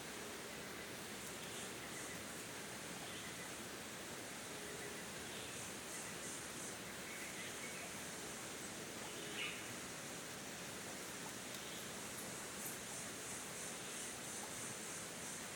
Parque da Cantareira - Núcleo do Engordador - Trilha do Macucu - iii
register of activity
19 December, ~14:00